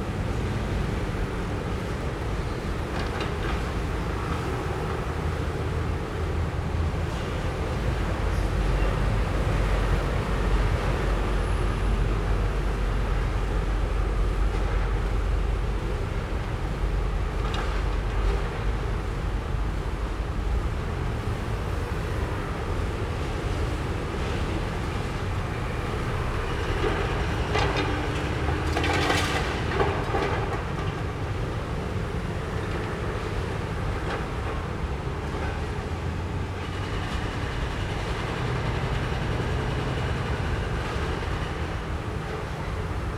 Ln., Sec., Roosevelt Rd. - At the construction site next to the park
At the construction site next to the park, traffic sound, Construction noise
Zoom H4n + Rode NT4